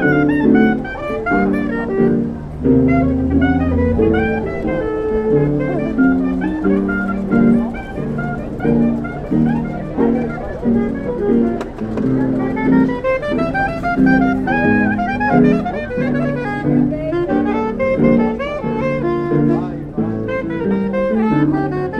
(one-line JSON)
{"title": "Flohmarkt Mauerpark, Berlin", "description": "Weg von der gegenüberliegenden Straßenseite zum Eingang des Flohmarktes. Straßenmusiker -> Sopransaxophon mit playback aus Brüllwürfel", "latitude": "52.54", "longitude": "13.40", "altitude": "48", "timezone": "GMT+1"}